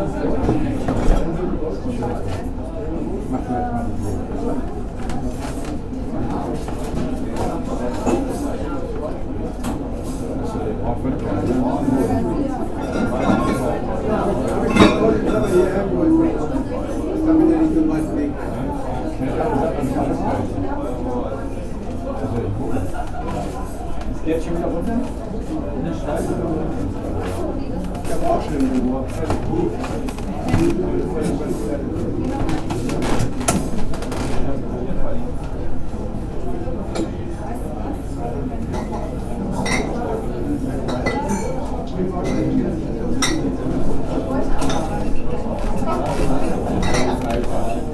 Mitte, Hannover, Deutschland - broyhanhaus (deele-stube)
broyhanhaus, kramerstr. 24, 30159 hannover
Hannover, Germany, 7 March 2015